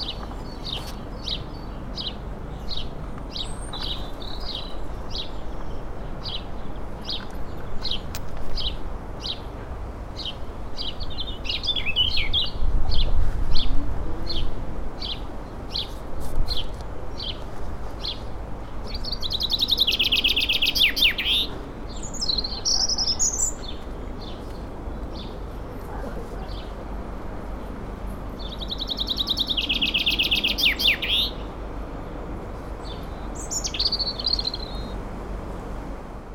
Nothe Gdns, Weymouth, Dorset, UK - Nothe Gdns